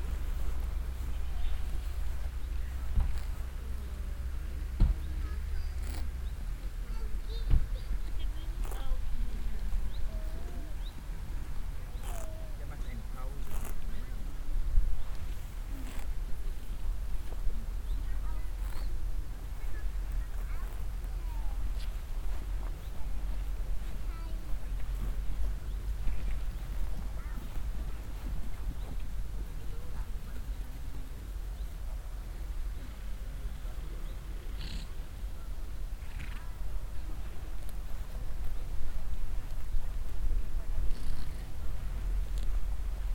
knuthenborg, safari park, donkeys eating meadow
inside the safari park area, wild donkeys eating meadow on a wide meadow - some visitors walking around talking
international sound scapes - topographic field recordings and social ambiences
8 September, 12:15